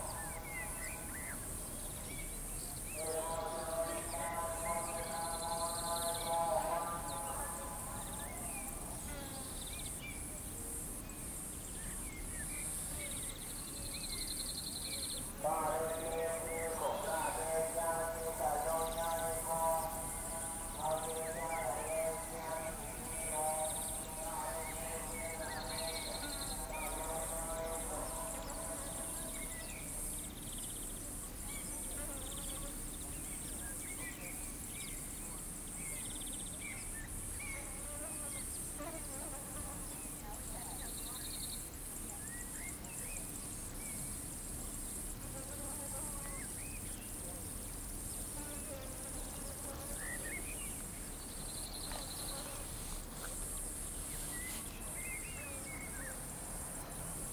Ancient Messene Agora, Greece - Agora, Megaphone car
Ambience from Agora, Ancient Messene, with distant amplified voice echoing from the hills. Thanks to Tuned City
Αποκεντρωμένη Διοίκηση Πελοποννήσου, Δυτικής Ελλάδας και Ιονίου, 10 June, 10:13